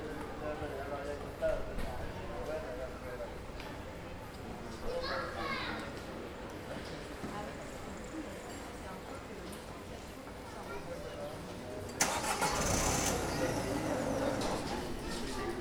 This recording is one of a series of recording, mapping the changing soundscape around St Denis (Recorded with the on-board microphones of a Tascam DR-40).
Saint-Denis, France, 25 May 2019